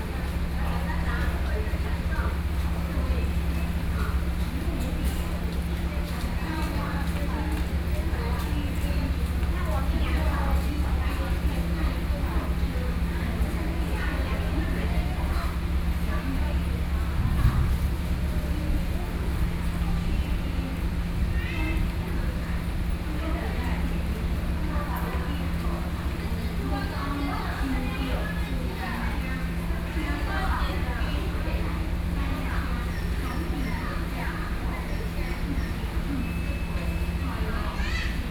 Yangmei Station - Station hall
in the Station hall, Sony PCM D50 + Soundman OKM II